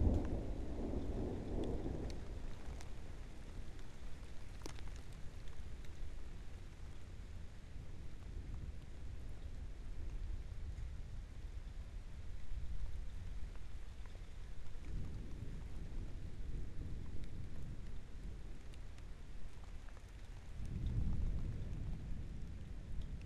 Garden at home. EM172 capsules on small polycarbonate disc with wind protection to a SD702 recorder. Mounted on a tripod about 1200mm above ground level.